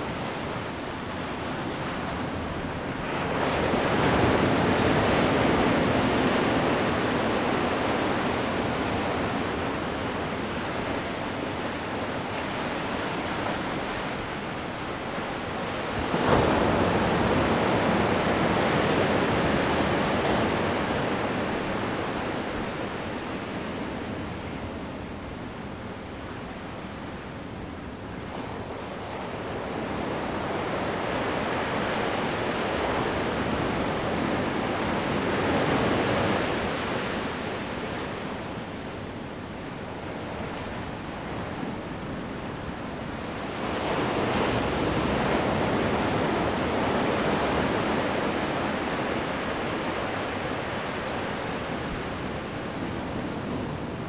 Brooklyn, NY, USA - Under the Boardwalk, Coney Island Beach.
Under the Boardwalk, Coney Island Beach.
Zoom H4n
8 November 2016